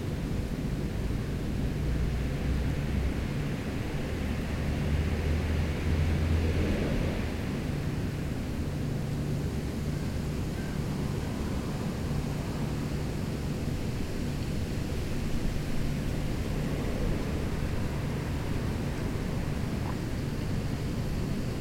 Into the Mettray prison, where children were sequestrated in the past, this is the sound of the chapel. It's not a very good sound as the bell is very enclosed into the tower, but it's important to know that it was the exact sound that children convicts were hearing.